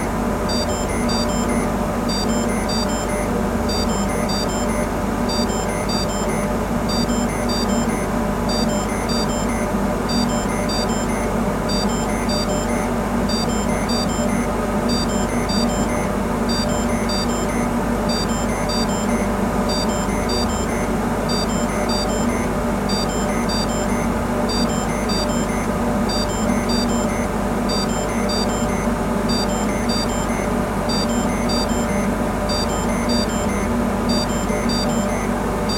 This factory is using biogas in aim to produce energy. Gas comes from the biggest dump of Belgium. Recording of an installation set in alarm, because of a boiler shutdown.
Mont-Saint-Guibert, Belgium, 2 October